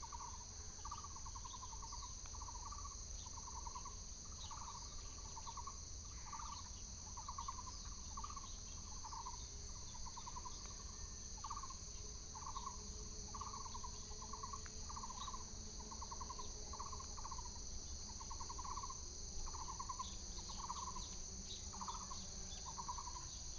沙坑農路, Hengshan Township - birds sound
birds sound, Morning in the mountains, Insects sound, Binaural recordings, Sony PCM D100+ Soundman OKM II
Hsinchu County, Taiwan, September 2017